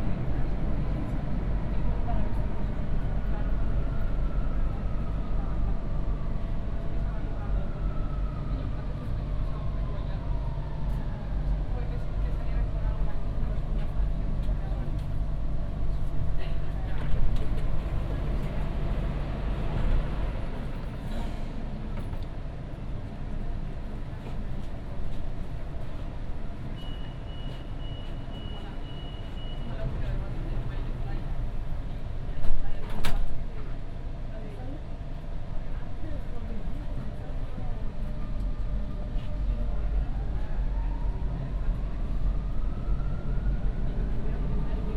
La Roqueta, Valencia, Valencia, España - Metro Valencia